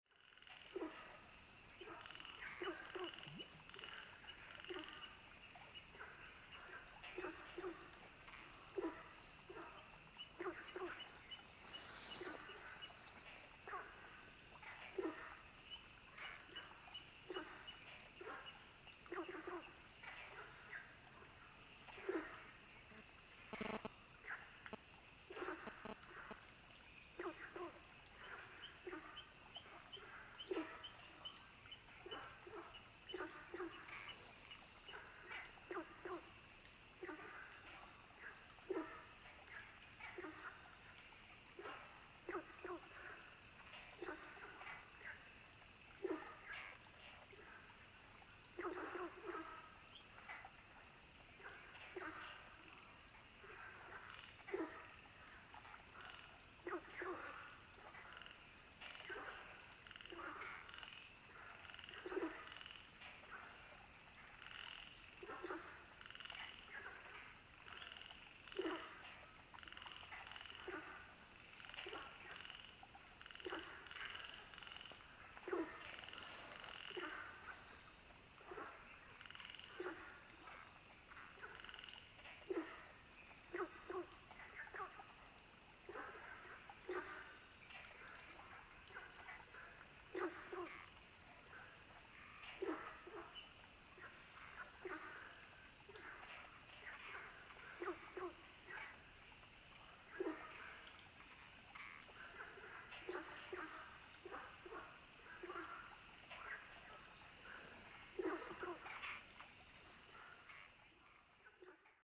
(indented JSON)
{
  "title": "Wucheng Village, Yuchi Township, Nantou County - Firefly habitat area",
  "date": "2016-04-19 19:04:00",
  "description": "Firefly habitat area, Frogs chirping\nZoom H2n MS+XY",
  "latitude": "23.93",
  "longitude": "120.90",
  "altitude": "756",
  "timezone": "Asia/Taipei"
}